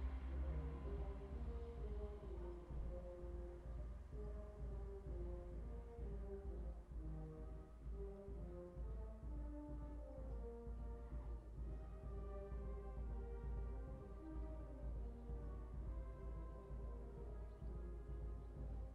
A brass band plays at a local fest or a wedding party. Its sound carries through the air through the neighbourhood.